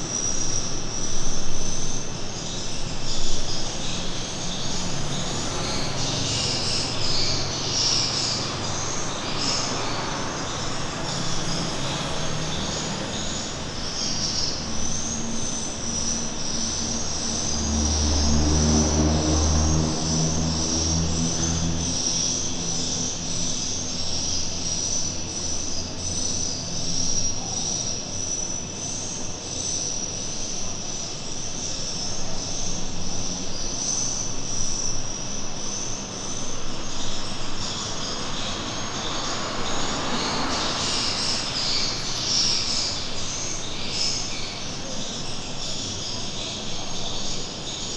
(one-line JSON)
{"title": "Bandar Kota Bharu, Kelantan, Malaysia - Edible Bird Nest farming in Kota Bharu, Kelantan, Malaysia", "date": "2019-08-28 00:10:00", "description": "Midnight stereo recording outside a building used for Edible Bird Nest farming, continuously playing a short loop of nesting Swifts to attract birds.", "latitude": "6.13", "longitude": "102.24", "altitude": "14", "timezone": "Asia/Kuala_Lumpur"}